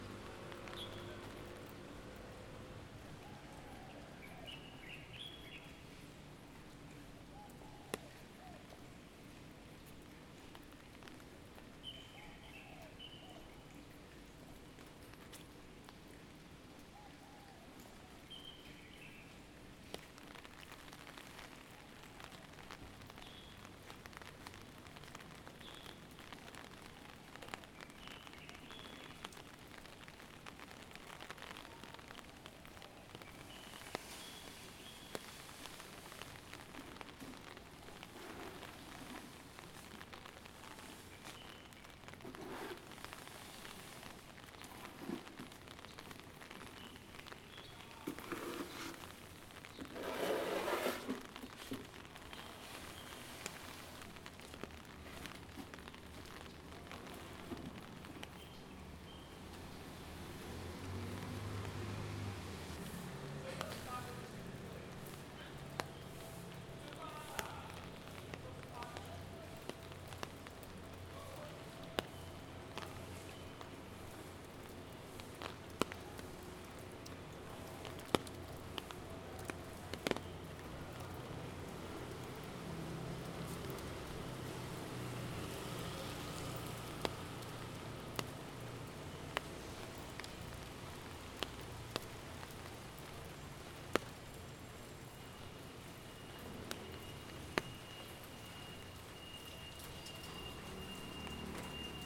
中正區，Zhongzheng Dist., Taipei City - Sweeping the Road under the Rain.
Road sweeper worker cleaning city side walk with broom in rainy morning in Zhongzheng Taipei.
Zoom H2n MS